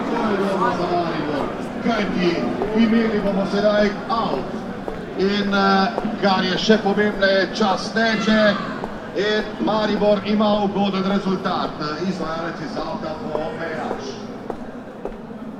Maribor, Slovenija - city as football match auditorium, waking
Maribor, Slovenia, 30 September 2014, 23:05